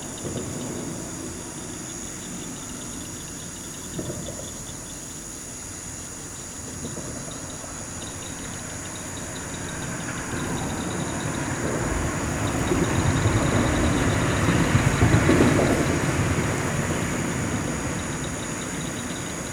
Austin, TX, USA - Under the Bridge
Recorded with a Maranrtz PMD661 and a pair of DPA 4060s
9 August